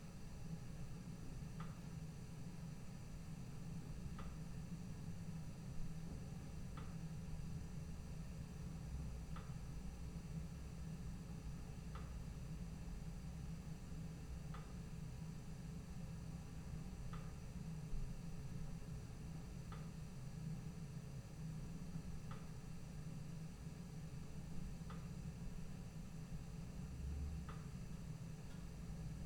Rijeka, Croatia, LPG flow - LPG flow
PrimoMic EM172 -> Sony PCM-D50